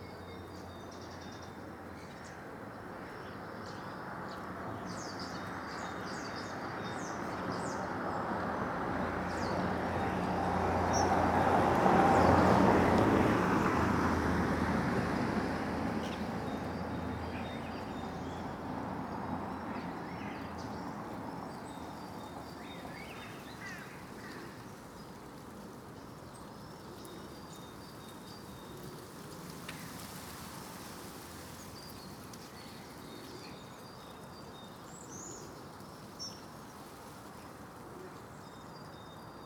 The Poplars High Street Graham Park Road
A heraldic turn
atop gateposts
lions hold shields
A once gateway bricked back into a wall
In a Range Rover
white hair uncombed
eyes staring
A woodpecker drums on a chimney
a thrush limbers up for spring